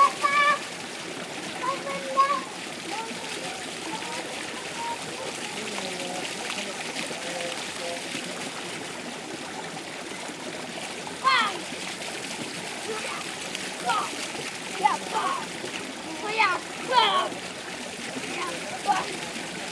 Leuven, Belgique - Chilren in the fountains
Children loudly playing into the fountains.